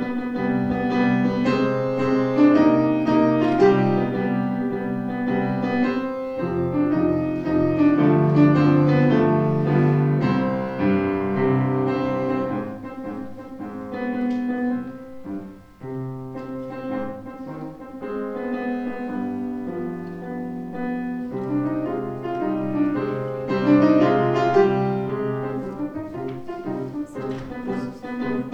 berlin, am zeughaus: zeughauskino - the city, the country & me: cinema of the german historical museum
eunice martins accompanies the silent film "lieb vaterland, magst ruhig sein" (1914) on the piano
the city, the country & me: january 30, 2014